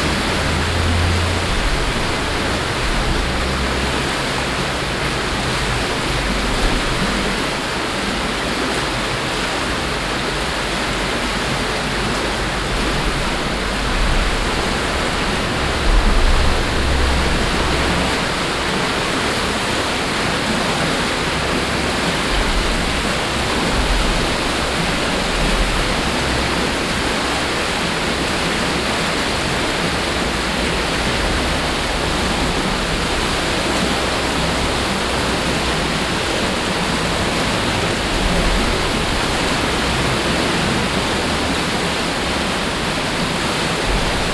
erkrath, neandertal, talstr, düssel unter brücke
lebhafter, eingegrenzter düsselfluss unter betonstrassenbrücke
soundmap nrw:
social ambiences/ listen to the people - in & outdoor nearfield recordings
neanderthal, talstrasse, brücke